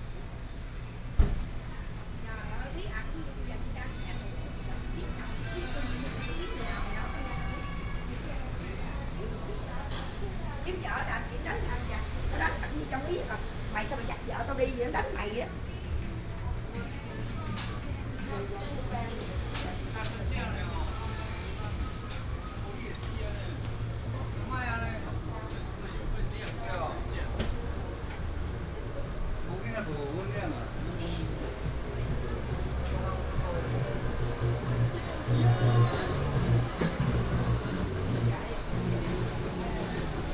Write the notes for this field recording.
Walking through the street, Traffic Sound, Shopping Street